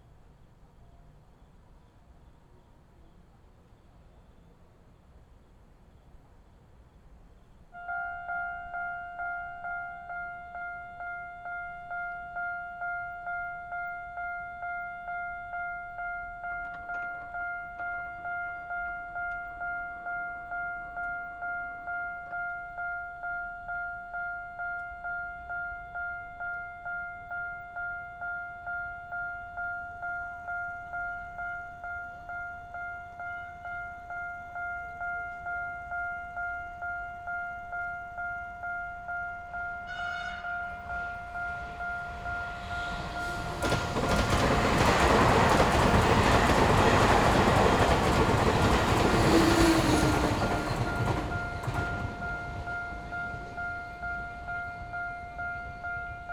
Near the railroad tracks, Trains traveling through, Traffic Sound, Railway level crossing
Zoom H6 MS+ Rode NT4
Yilan County, Taiwan, 26 July 2014